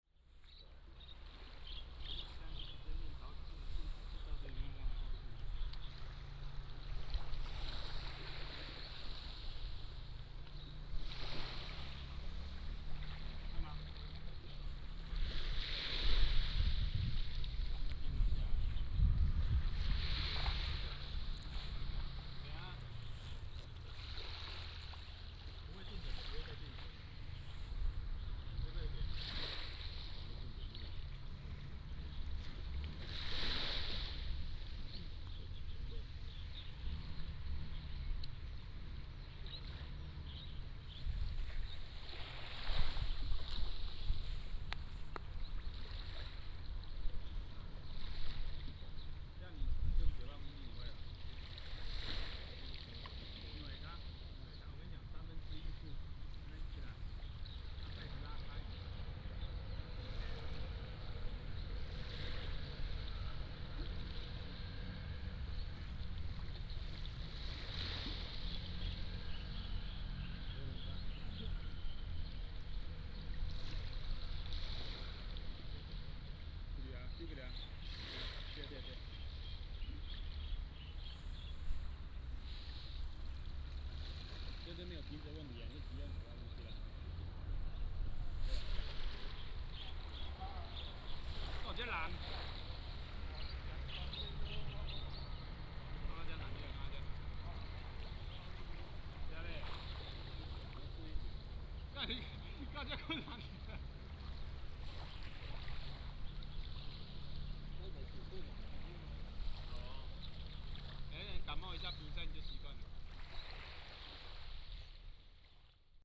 On the beach next to the fishing port, Sound of the waves, In the beach